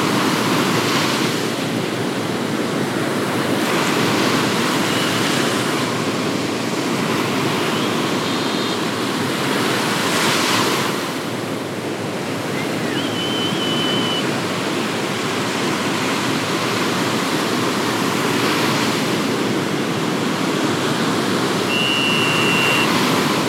Neringos sav., Lithuania - Windy Beach
Recordist: Raimonda Diskaitė
Description: Windy day on the beach. Large waves crashing, lifeguard warning whistles and kids talking in the background. Recorded with ZOOM H2N Handy Recorder.
31 July 2016